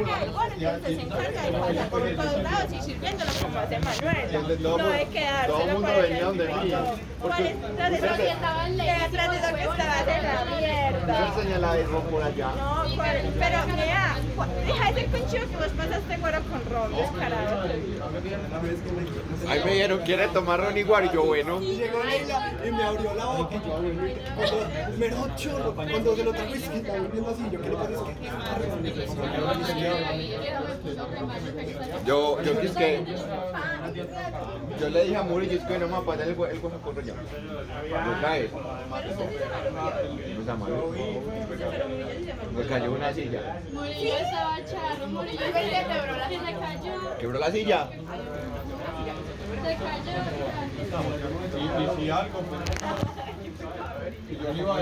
sep. 9.45 a. m. Mandarinas
Fecha: 9 de septiembre de 2021
Hora: 9:45
Coordenadas:
Dirección: Universidad de Medellín. Mandarinas facultad de comunicación.
Descripción: Sonido ambiente de Mandarinas de la facultades de comunicación en cambio de clases.
Sonido tónico: Personas Hablando durante la fila en el quiosco de Mandarinas
Señal sonora: Personas que de fondo que aveces suben el tóno, pajaros muy de fondo
Técnica: Micrófono celular estéreo
Tiempo: 3 minutos
Integrantes:
Juan José González
Isabel Mendoza Van-Arcken
Stiven López Villa
Manuela Chaverra
Antioquia, Región Andina, Colombia